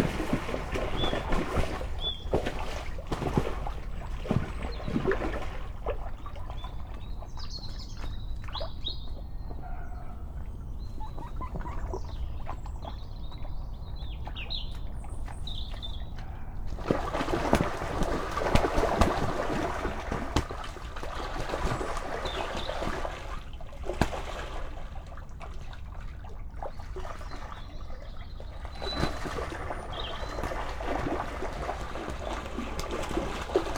at My Garden Pond, Malvern, Worcestershire, UK - 6am Duck Pond 11-4-22.
Mallard sparring and mating. I like the near and distant sounds and the movement of focus left and right.
MixPre 6 II with 2 Sennheiser MKH 8020 on a table top 1 metre from the edge of the water.